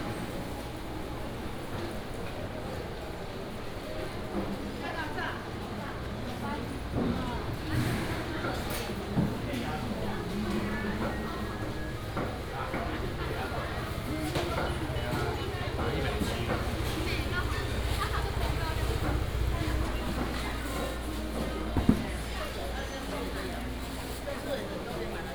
山仔頂市場, 平鎮區 - Walking through the traditional market
Traditional market, vendors peddling